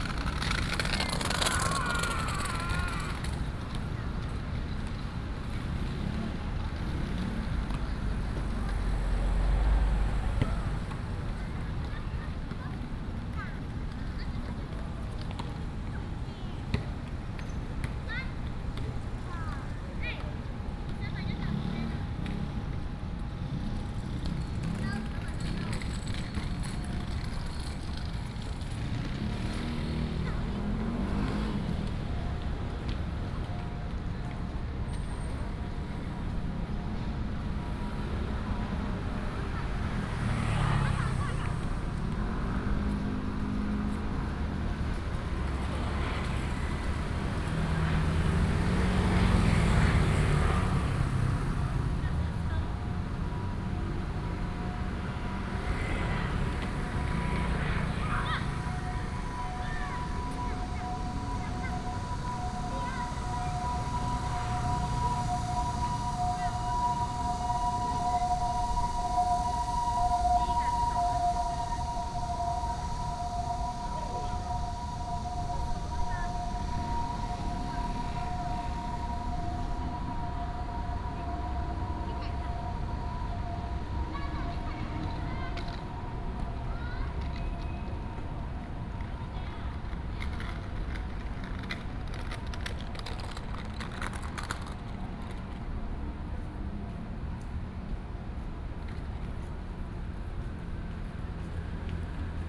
In the Plaza, Children were playing ball, Traffic Sound
Banqiao District, New Taipei City, Taiwan, July 2015